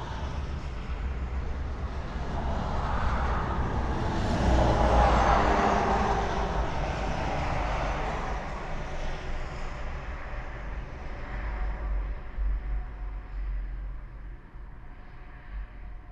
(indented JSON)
{
  "title": "A14, Lithuania, railway bridge over highway",
  "date": "2020-02-17 14:00:00",
  "description": "abandoned railway bridge over highway. omni mics and LOM geophone",
  "latitude": "55.46",
  "longitude": "25.57",
  "altitude": "127",
  "timezone": "Europe/Vilnius"
}